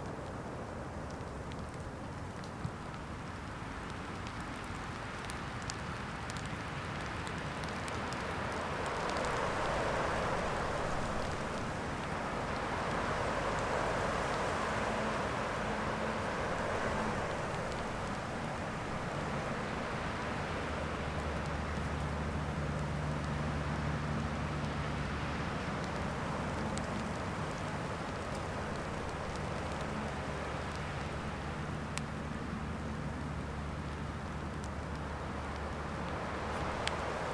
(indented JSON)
{
  "title": "Utena, Lithuania, strong windm rain starts",
  "date": "2022-02-18 15:50:00",
  "description": "Going back to my hone from daily walk.",
  "latitude": "55.52",
  "longitude": "25.59",
  "altitude": "100",
  "timezone": "Europe/Vilnius"
}